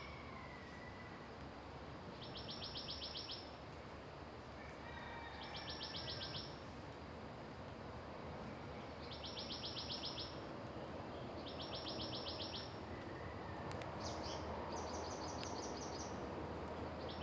水上巷, 桃米里 Puli Township - early morning
Morning in the mountains, Bird sounds, Traffic Sound, raindrop
Zoom H2n MS+XY
21 April, 05:08